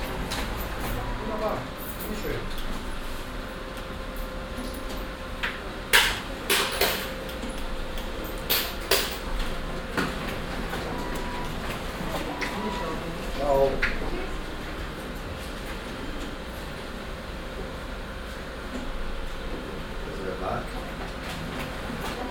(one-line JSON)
{"title": "cologne, ubierring, kopiergeschaeft", "date": "2008-07-15 00:07:00", "description": "mittags im kopierladen, maschinen und lüftungsgeräusche, koelsche kundengespräche\nsoundmap nrw:\nsocial ambiences/ listen to the people - in & outdoor nearfield recordings", "latitude": "50.92", "longitude": "6.96", "altitude": "55", "timezone": "Europe/Berlin"}